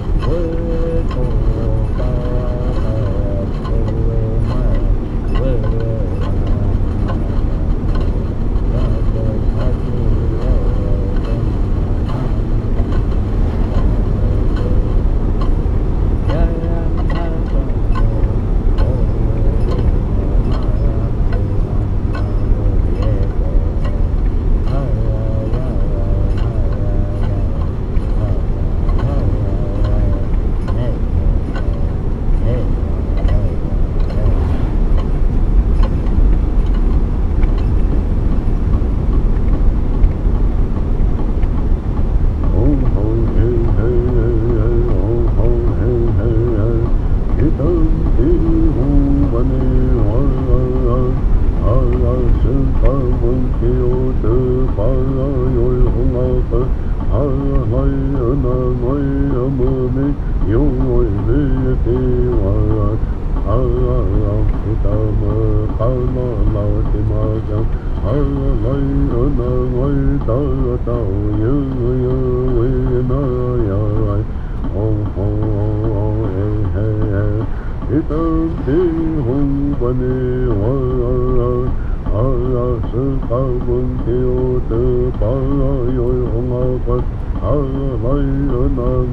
Hopi chanting on the radio, heading north on Route 160 towards Cameron
Cameron, AZ, USA, 23 August 2011, 13:25